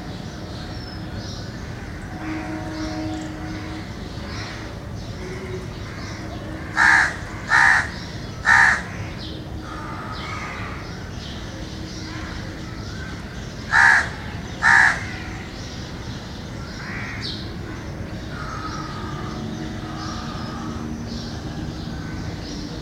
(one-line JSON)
{"title": "Chittaranjan Colony, Kolkata, West Bengal, India - Early morning sounds from the roof of my flat, Kolkata", "date": "2018-04-15 05:30:00", "description": "The mic is placed on the roof of my flat. You hear lots of birds, mostly, crows, cuckoos, doves, sparrows etc., distant train horns, ac hum, and occasional traffic. Summers are normally busy from early mornings.", "latitude": "22.49", "longitude": "88.38", "altitude": "9", "timezone": "Asia/Kolkata"}